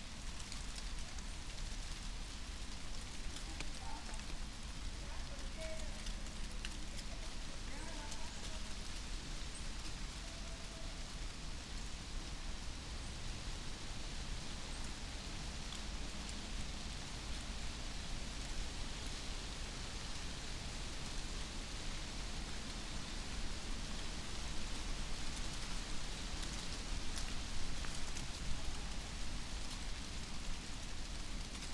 Piramida, Maribor, Slovenia - flying leaves
dry leaves flying and slowly descending on soft autumn carpet, wind, passers-by walking above